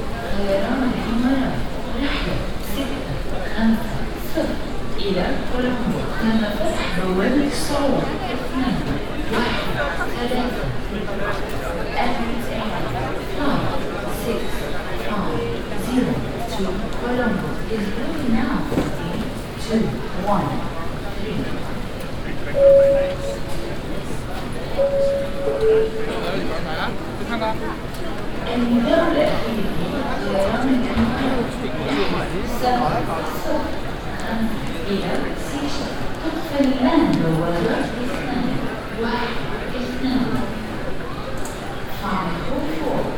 dubai, airport, transit zone
inside the airport transit zone at the luggage check
international soundmap - topographic field recordings and social ambiences